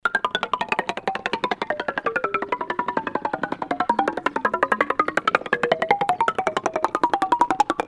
hoscheid, sound object, marimba allouette
Recording of the sound object Marimba Allouette, part of the Hoscheid "Klangwanderweg" - "sentier sonore" - a wooden marimba instrument in a circle form.
Hoscheid, Klangobjekt, Marimba Allouette
Aufgenommen auf dem Klangobjekt Marimba Allouette, Bestandteil des Klangwanderwegs von Hoscheid. Ein hölzernes Marimba-Instrument in Kreisform.
Mehr Informationen über den Klangwanderweg von Hoscheid finden Sie unter:
Hoscheid, objet acoustique, Marima Alouette
Enregistrement de Marimba Alouette, élément du Sentier Sonore de Hoscheid, un instrument marimba en bois de forme circulaire.
Informations supplémentaires sur le Sentier Sonore de Hoscheid disponibles ici :
more informations about the Hoscheid Klangwanderweg can be found here:
Projekt - Klangraum Our - topographic field recordings, sound art objects and social ambiences
Hoscheid, Luxembourg, 2 June, 16:15